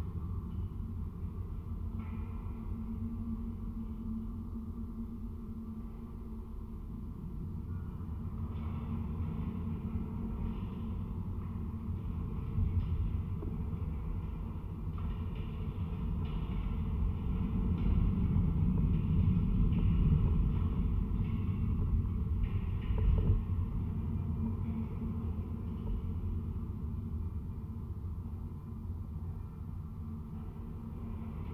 abandoned building (20 years ago it was cult coffee) in the middle of the town. contact mics on the fence surrounding the building